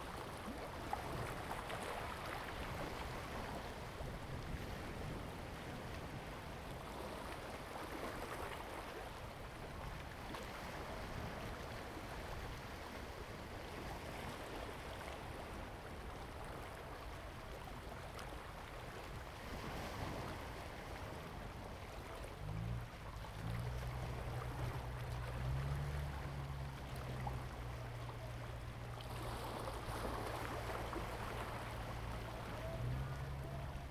菓葉村, Huxi Township - On the bank
On the bank, Tide, Near the fishing port
Zoom H2n MS +XY